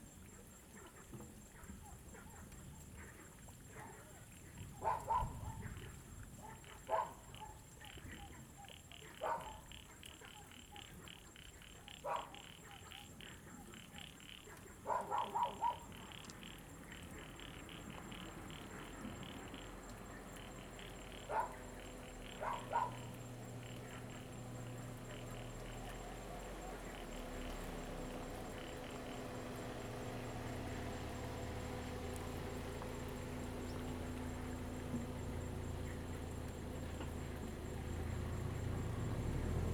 都蘭村, Donghe Township - Frogs and Dogs
Thunder, Frogs sound, Dogs barking, Mountain road at night
Zoom H2n MS+XY
Taitung County, Taiwan, 6 September 2014